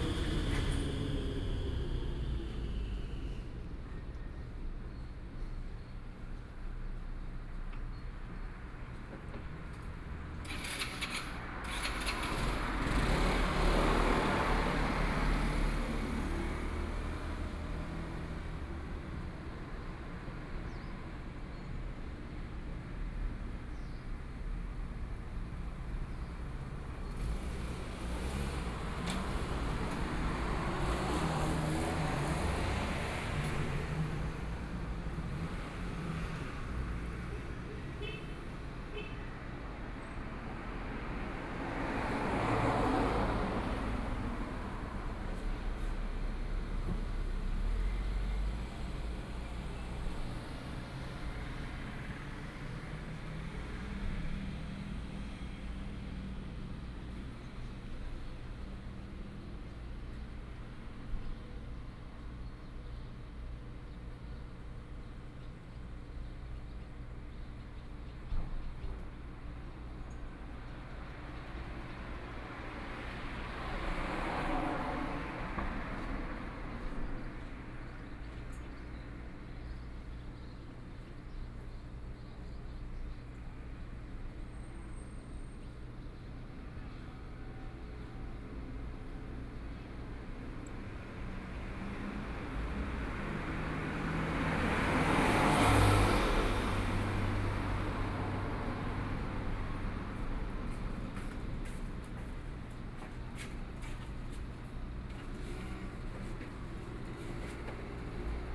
Sec., Zhuangbin Rd., Zhuangwei Township - At the roadside
At the roadside, In front of the convenience store, Traffic Sound
Sony PCM D50+ Soundman OKM II